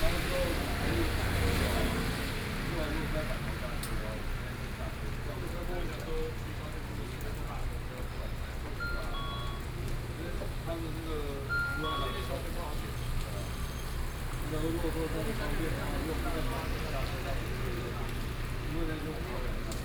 Yilan City, Taiwan - Rainy Day
In the convenience store door, Rainy Day, Voice traffic on the street, Voice conversations between young people, Binaural recordings, Zoom H4n+ Soundman OKM II